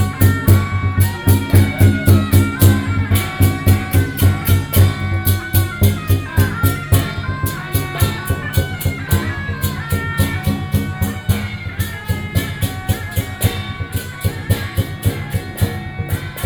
Taipei city, Taiwan - Traditional temple festivals
Firework, Traditional temple festivals, Gong, Traditional musical instruments, Binaural recordings, ( Sound and Taiwan - Taiwan SoundMap project / SoundMap20121115-21 )
15 November 2012, 15:15